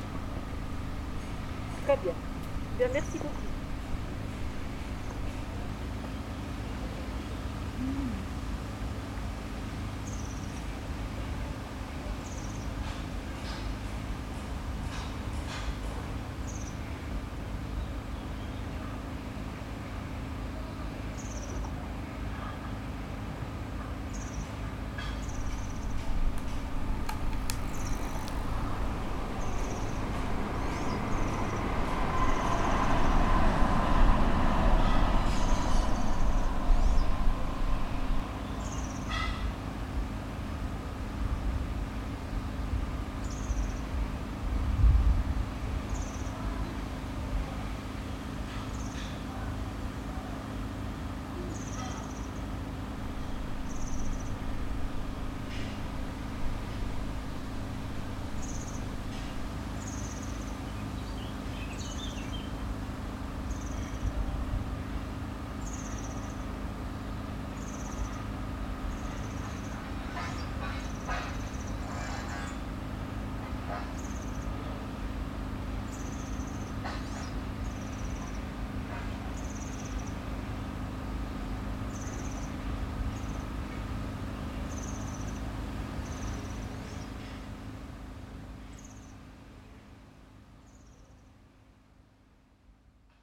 Au bord de la piste cyclable près du Sierroz un serin cini solitaire, bruits de travaux, quelques passants.